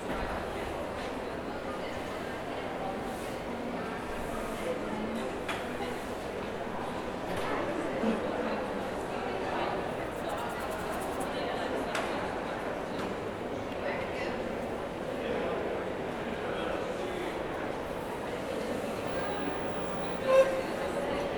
Background Sound, Sage Gateshead - 10:15PM
Some background ambience I recorded after a performance of Pierrot Lunaire at the Sage Gateshead. Enjoy :)
Gateshead, UK